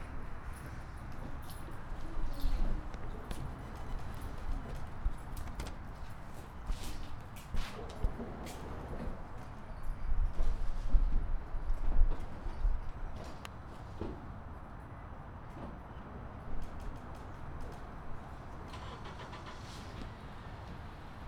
Walt Whitman Avenue, Mount Laurel, NJ, USA - Outside of the Mount Laurel Library
This recording was taken outside at the entrance of the Mount Laurel Library during the middle of the day.